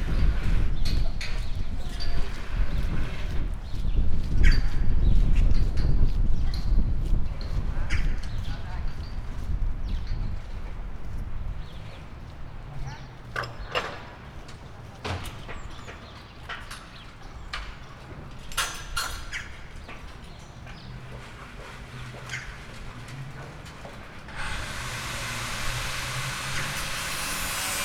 Poznan, Jana III Sobieskiego housing estate - insulation workers
construction workers during their duties on a scaffolding, putting up insulation material on the building. drilling, lifting things, hammering, power cutting, talking.